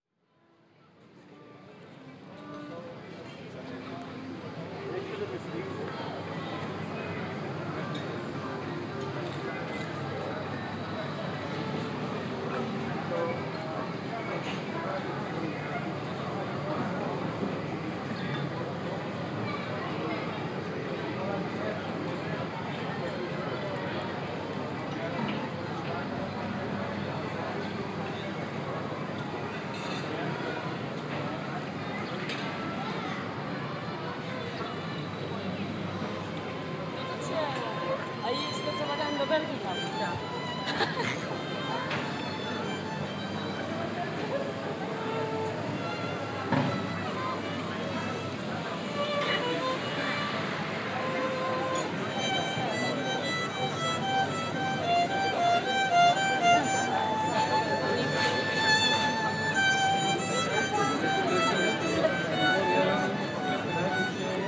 Binaural recording of square full of tourists and restaurants, with a violinist and music from radio as well.
ZoomH2n, Soundmann OKM
Opština Kotor, Crna Gora